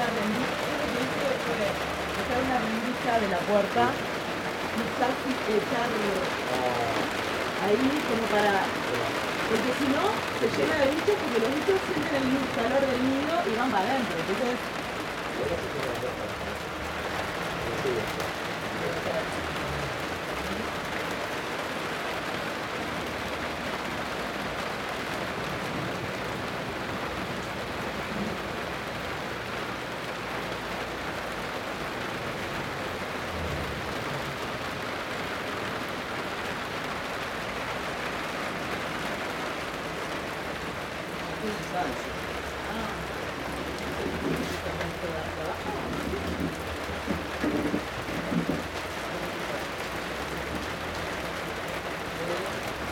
Barreiro, Puerto la Paloma, Departamento de Rocha, Uruguay - la paloma - raining
Heavy rain and some voices.